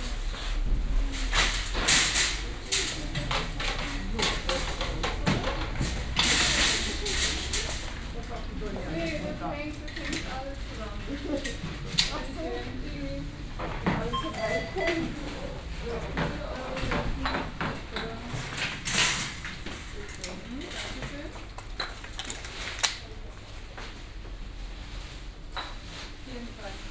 Neukölln, Berlin, Deutschland - Aldi supermarket
Ambience at Aldi supermarket, Kottbusser Damm. This was one of the cheap and ugly discounters, it was frequented by all sort of people and nationalities. It closed its doors in June 2012.